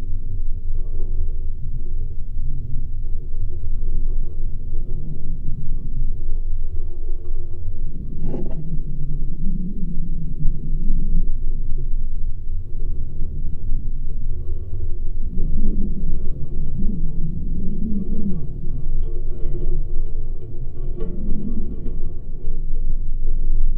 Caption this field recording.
empty greenhouse. windy day. contact mics and geophone on the constructions of the greenhouse.